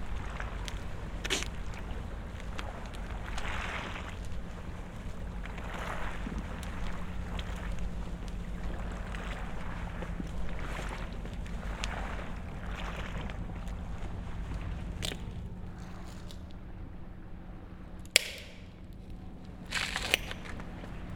{"title": "Punto Franco Vecchio, Molo, Trieste, Italy - walk at waterfront", "date": "2013-09-08 16:50:00", "description": "walk on the spur outside abandoned buildings at Molo 0, old harbour Punto Franco Nord, Trieste.(SD702, AT BP4025)", "latitude": "45.67", "longitude": "13.76", "timezone": "Europe/Rome"}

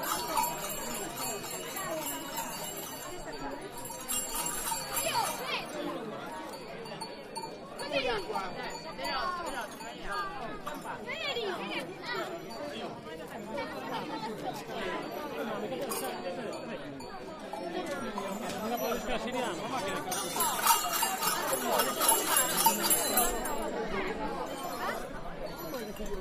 {"title": "M.Lampis - Voices and bells", "latitude": "40.21", "longitude": "9.28", "altitude": "647", "timezone": "GMT+1"}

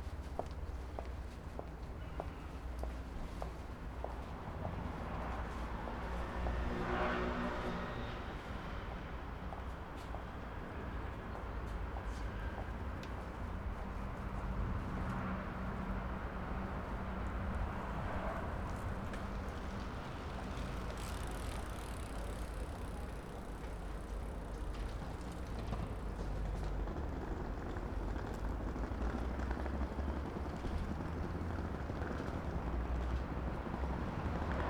{"title": "Poznan, Gen. Maczka housing estate - sorting garbage", "date": "2014-03-30 18:11:00", "description": "a lady sorting her trash before putting it in the garbage cans. languid Sunday ambience around apartment buildings. high-heeled steps. friend approaches with rolling suitcase at the end of the recording.", "latitude": "52.42", "longitude": "16.92", "altitude": "73", "timezone": "Europe/Warsaw"}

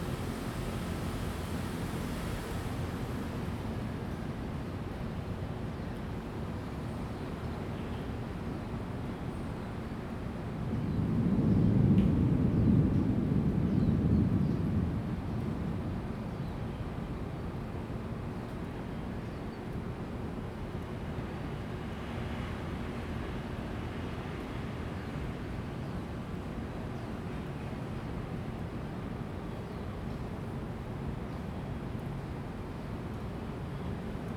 {"title": "中興公園, 信義區 Taipei City - Thunder sound", "date": "2015-07-23 13:31:00", "description": "in the Park, Thunder sound\nZoom H2n MS+XY", "latitude": "25.03", "longitude": "121.56", "altitude": "17", "timezone": "Asia/Taipei"}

